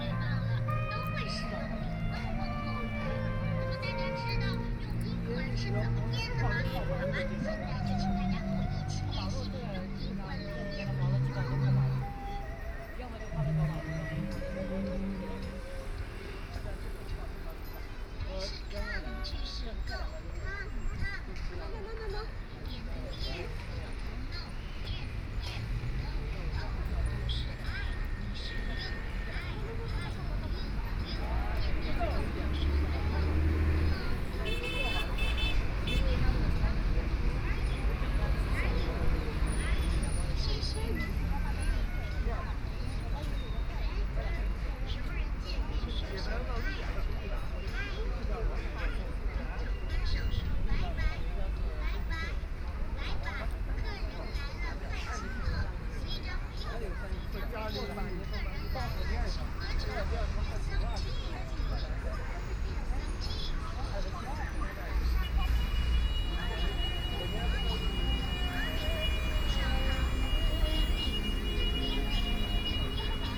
Dalian Road, Hongkou District - At the junction
At the junction, Traffic Sound, Saxophones, Merchandising voice, Binaural recording, Zoom H6+ Soundman OKM II